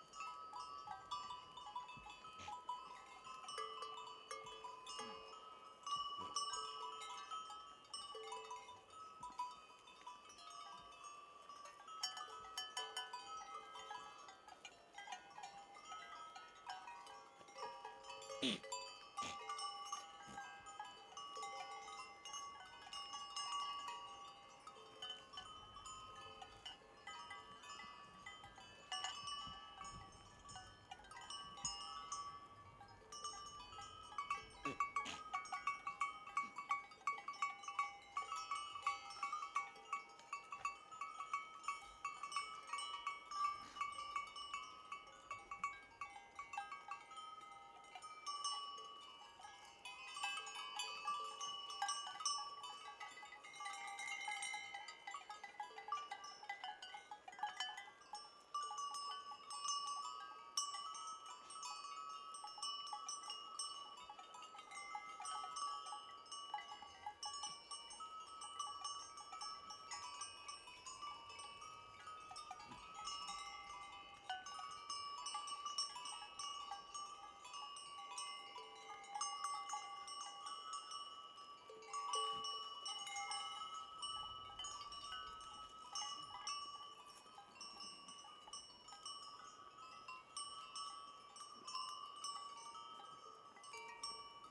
Patmos, Liginou, Griechenland - Weide Ziegen 01
Ein Künstlerfreund von mir hat bis 2010 hier ein Freiluftatelier.
Ziegen, Glocken, Furzen.
Patmos, Greece